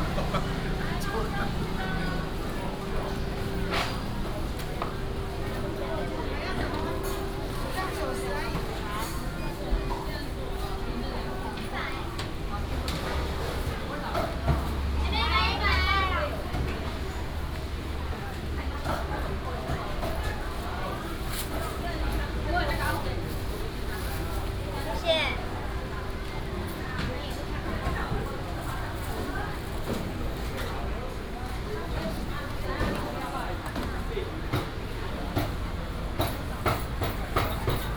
Traditional market, traffic sound